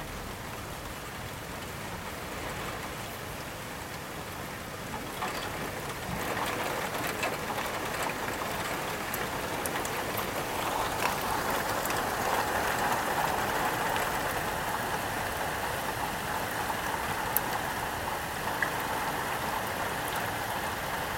koeln, window, descending thunder & rain
rain during a thunderstorm.
recorded june 22nd, 2008.
project: "hasenbrot - a private sound diary"
Cologne, Germany